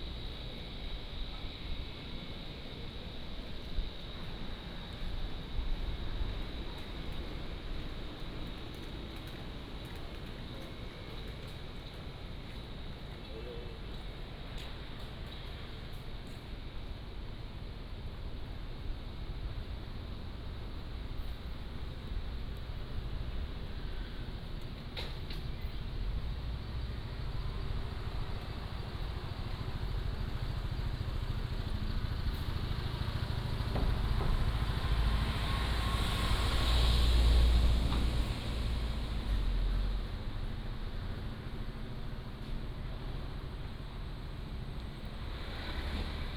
Lane, Sec., Xinyi Rd., Da-an District - Walking on the road
Walking on the road, soundwalk